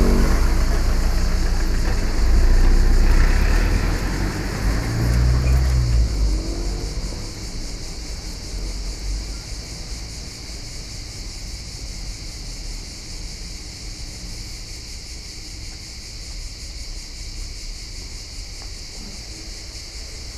bologna, via vallescura, ingresso ingegneria
summer, car, step, cicadas, voice
18 July 2011, 09:00